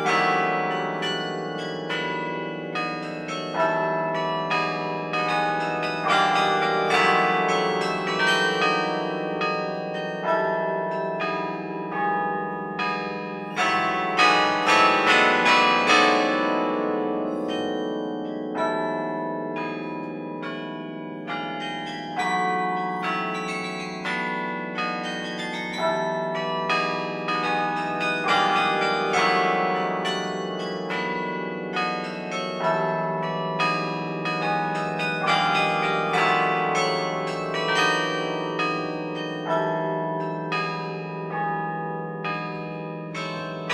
Le Quesnoy - Carillon
Maitre Carillonneur : Mr Charles Dairay